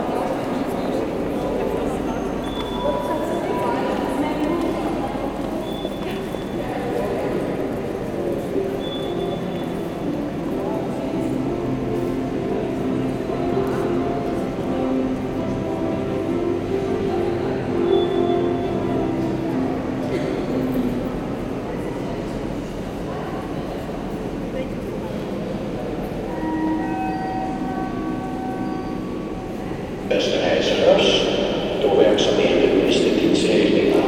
{"title": "Maastricht, Pays-Bas - Red light signal", "date": "2018-10-20 13:45:00", "description": "The red light indicates to pedestrian they can cross the street. It produces a sound which is adaptative to the number of cars, a camera films the traffic. As this, sometimes the duration is long, other times it's short.", "latitude": "50.85", "longitude": "5.70", "altitude": "48", "timezone": "Europe/Amsterdam"}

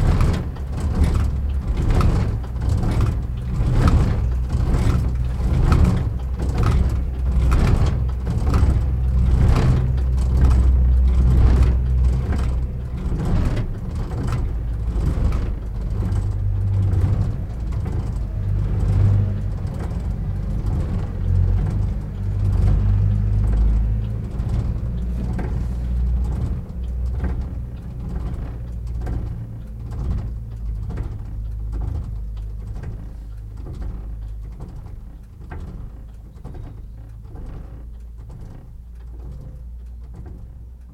{"title": "Le Bourg, Le Mage, France - Le mage - cloche de l'église", "date": "2020-02-20 10:00:00", "description": "Le Mage - département de l'Orne - Parc Naturel Régional du Perche\nMouvement manuel de la 2nd cloche\nRemerciement Cie AMA - Falaise", "latitude": "48.51", "longitude": "0.80", "altitude": "186", "timezone": "Europe/Paris"}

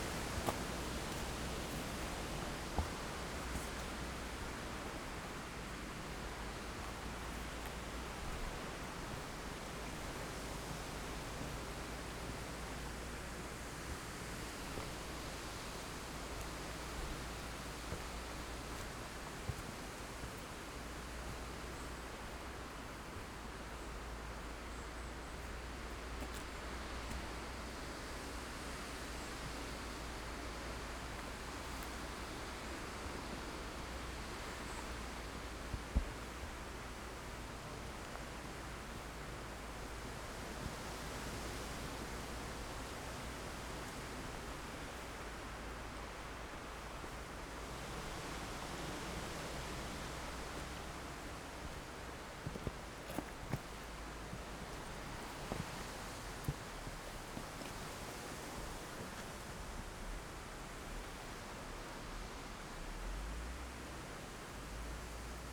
{"title": "Kalenica, Góry Sowie - forest ambience, wind in high trees", "date": "2019-09-27 11:45:00", "description": "Góry Sowie, Owl mountains, Eulengebirge, forest ambience, sound of the wind\n(Sony PCM D50, DPA4060)", "latitude": "50.65", "longitude": "16.53", "altitude": "851", "timezone": "Europe/Warsaw"}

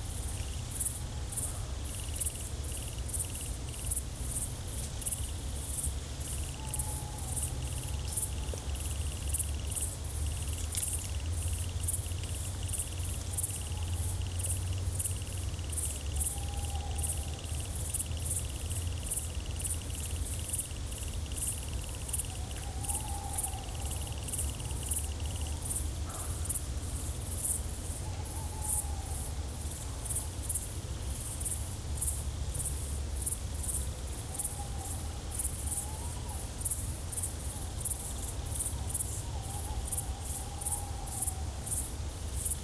{"title": "Unnamed Road, Grimbosq, France - Chorus of Nocturnal Grasshoppers in the Foret de Grimbosq.", "date": "2021-09-22 21:00:00", "description": "Probably a group of grieved Decticellae singing together at nightfall...\nORTF\nTascam DR100MK3\nLom Usi Pro.", "latitude": "49.06", "longitude": "-0.45", "altitude": "76", "timezone": "Europe/Paris"}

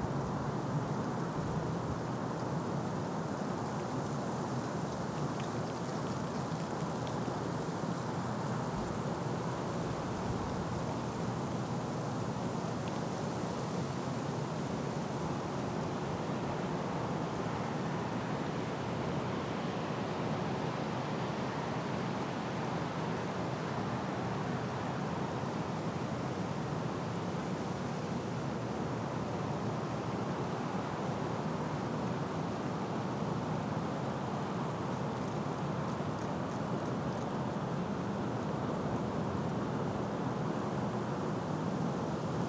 {"title": "Montreal: Mont Royal Lookout - Mont Royal Lookout", "date": "2008-11-02 06:30:00", "description": "equipment used: Zoom H4, 2 x Octava MK12", "latitude": "45.51", "longitude": "-73.59", "altitude": "170", "timezone": "America/Montreal"}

